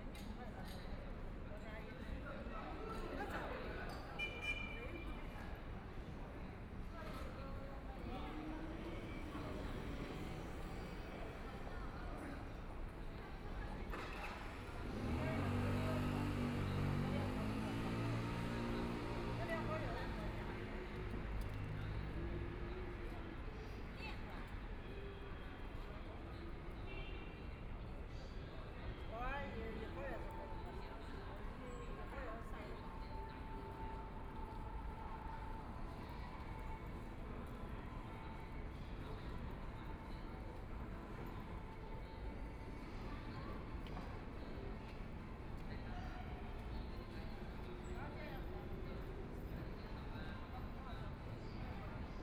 Pudong New Area, Shanghai - In the Atrium Plaza
In the Atrium Plaza, Discharge, The crowd, Electric cars, Binaural recording, Zoom H6+ Soundman OKM II